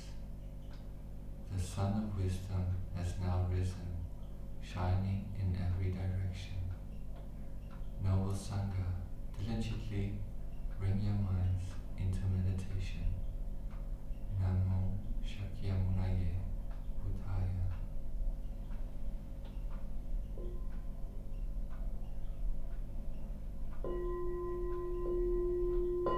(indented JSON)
{
  "title": "Unnamed Road, Dorchester, UK - New Barn Morning Meditation Pt1",
  "date": "2017-10-01 07:00:00",
  "description": "This upload captures the morning chant read in English and then chanted in Vietnamese. (Sennheiser 8020s either side of a Jecklin Disk on a SD MixPre6)",
  "latitude": "50.73",
  "longitude": "-2.49",
  "altitude": "115",
  "timezone": "Europe/London"
}